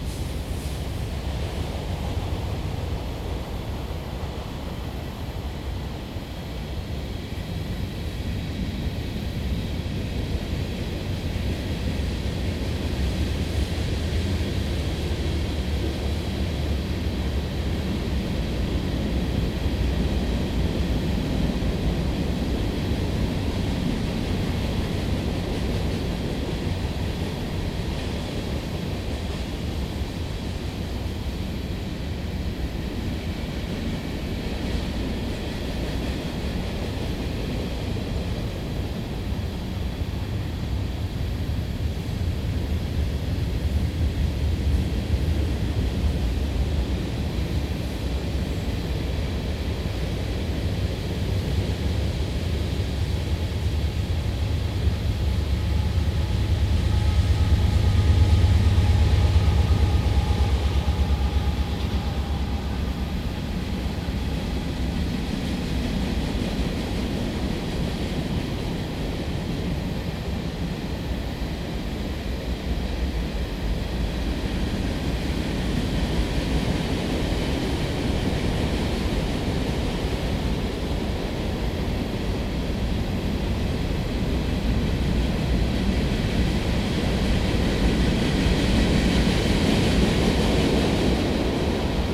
Stinging Nettle Trail. Union Pacific freight train passing in the woods.